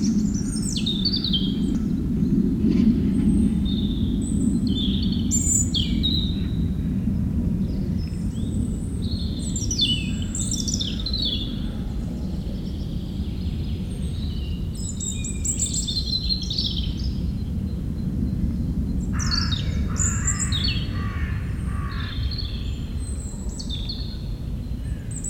Oud-Heverlee, Belgium - Meerdaalbos
Into the huge forest called Meerdaalbos, the European Robin singing, and planes takeoffs.